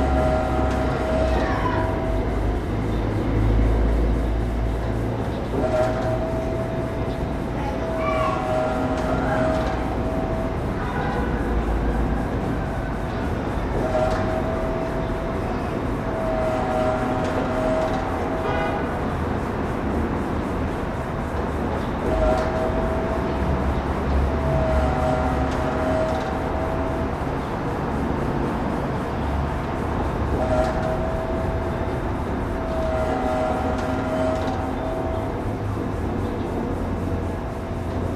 Pumpjack well behind storefront church, Los Angeles